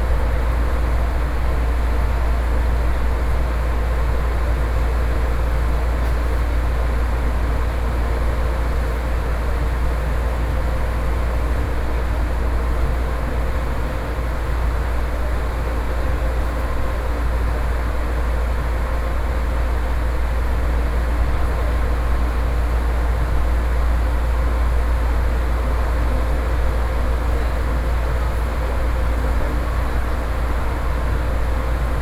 Taipei Metro Brown Line
from Songshan Airport Station to Xihu Station, Sony PCM D50 + Soundman OKM II